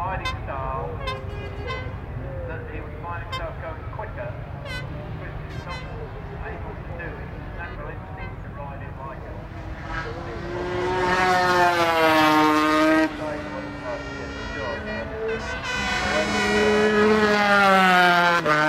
Castle Donington, UK - british motorcycling grand prix 2002 ... qualifying ...
british motorcycle grand prix 2002 ... qualifying ... single point mic to sony minidisk ... commentary ... time approximate ...